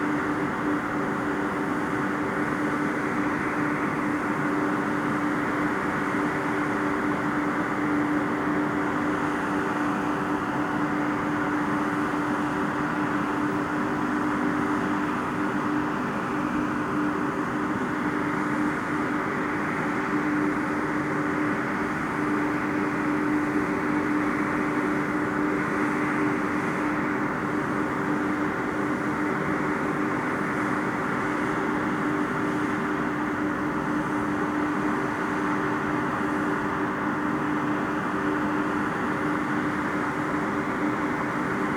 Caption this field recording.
Long trains carry the brown coal along special tracks to the power stations where it is burnt. This one is waiting for the signal.